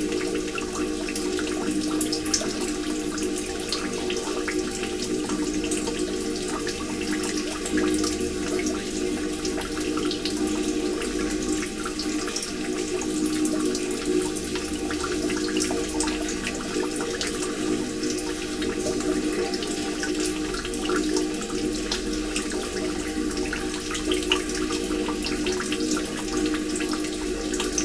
Utena, Lithuania, inside rain well
Rain/sewerage well in car parking. small microphones inside the well.
Utenos apskritis, Lietuva, 24 November 2020